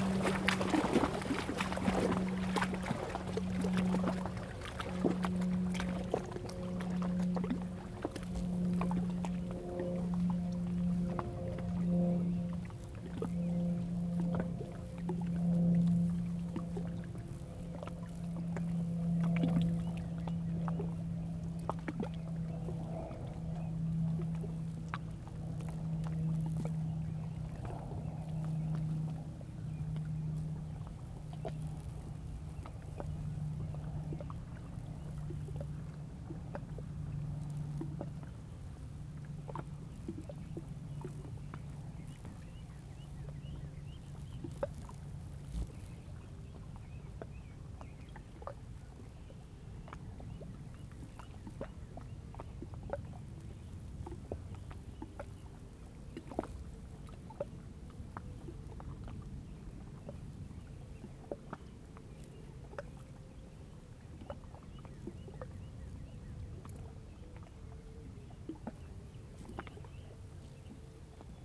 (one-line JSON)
{"title": "Dog Lake, ON, Canada - Ontario cottage country soundscape", "date": "2016-06-04 19:00:00", "description": "Favourite swimming spot on Dog Lake. Light, warm wind. Many dragonflies flitting around. Party music drifting from cottage across the lake. Powerboats. Dive. Swim. Zoom H2n, 120degree stereo.", "latitude": "44.43", "longitude": "-76.35", "altitude": "102", "timezone": "America/Toronto"}